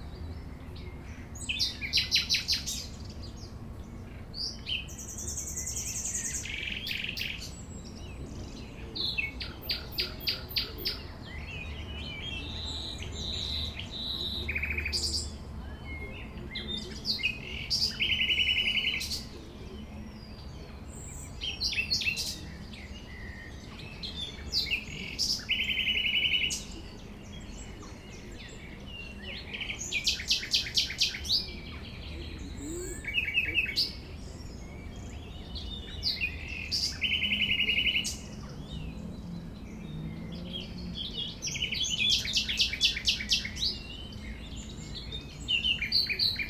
Gießen, Deutschland - Nightingale & Distant Gardeners
Song of a nightingale next to our studio caravan. Right behind the fence, some Schreber gardners were enjoying their evening talk. Recorded with Zoom H4N